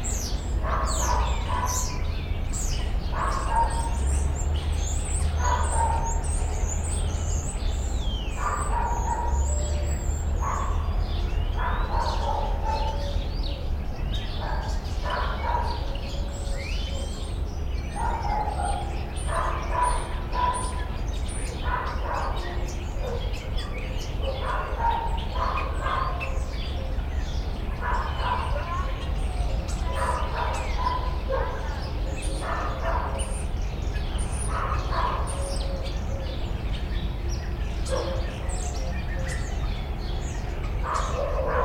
Ciampino, Italy - Side street, late morning
Sony PCM D100
Ciampino RM, Italy, 12 February 2018, 21:51